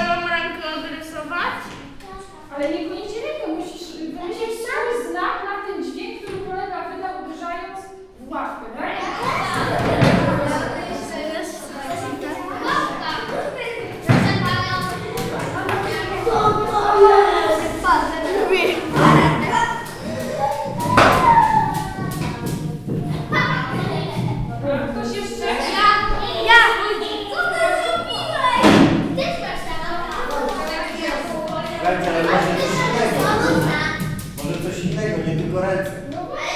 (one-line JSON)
{"title": "Wyspa Sobieszewska, Gdańsk, Poland - Warsztaty w szkole", "date": "2015-10-01 08:44:00", "latitude": "54.34", "longitude": "18.91", "altitude": "2", "timezone": "Europe/Warsaw"}